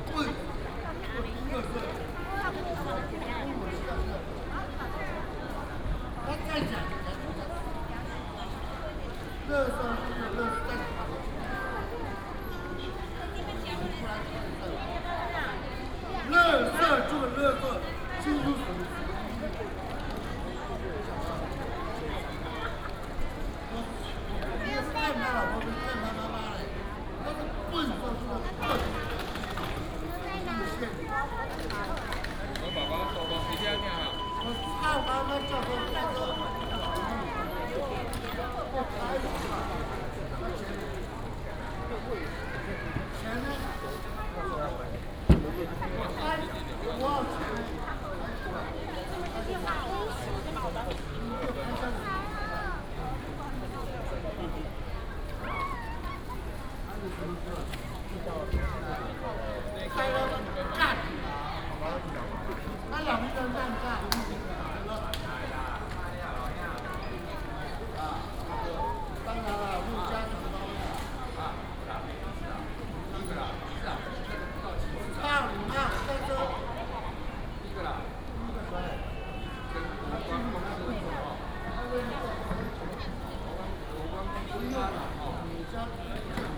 THSR Hsinchu Station, 竹北市 - Walk in the station hall
Walk in the station hall, Station information broadcast
Zhubei City, 高鐵七路6號, 7 May 2017